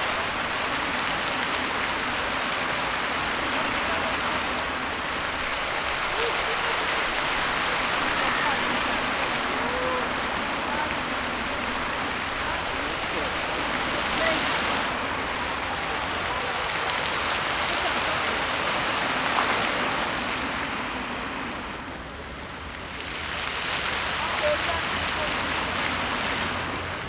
Skwer 1 Dywizji Pancernej WP, Warszawa, Pologne - Multimedialne Park Fontann (b)
Multimedialne Park Fontann (b), Warszawa